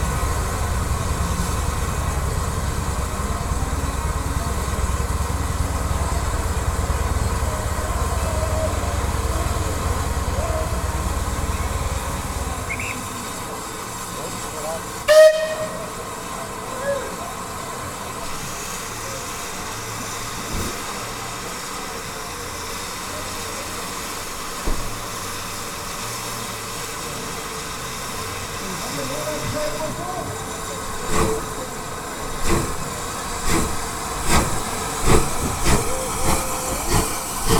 General sounds from a 1940s event at the Severn Valley Railway station at Arley.
MixPre 3 with 2 x Beyer Lavaliers.